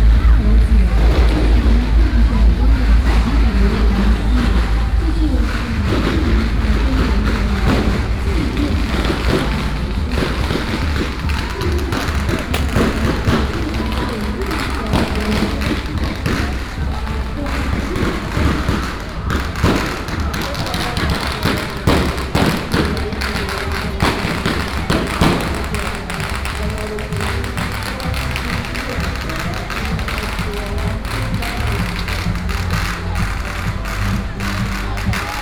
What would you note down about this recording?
Festivals, Walking on the road, Electronic firecrackers